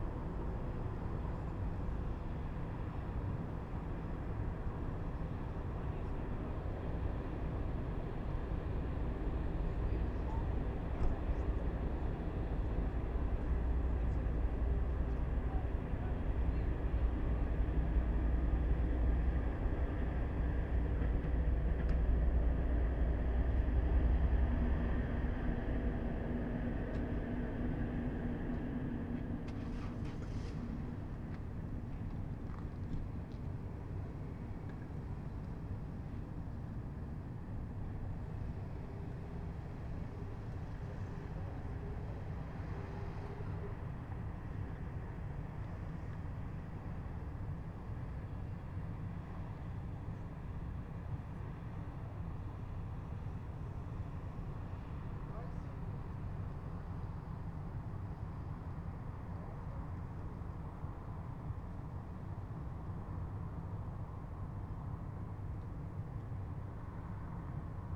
Tsentral’ny Rayon, Minsk, Belarus, hotel
MInsk night drone from the 6th floor hotel room window